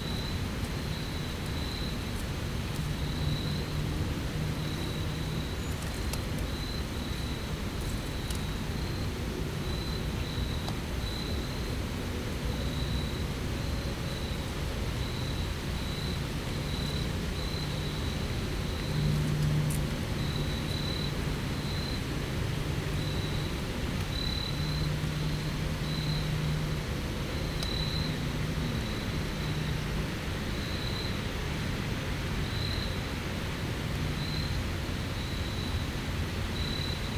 Al Foster Trail, Eureka, Missouri, USA - Al Foster Southern Terminus
Wind rustling through dry fall foliage on the bank of the Meramec River at the current southern terminus of the Al Foster Trail near Rebel Bend – a large crescent shaped curve in the river. During the Civil War this area was said to provide the best route for secessionists to travel back and forth from St. Louis to the south.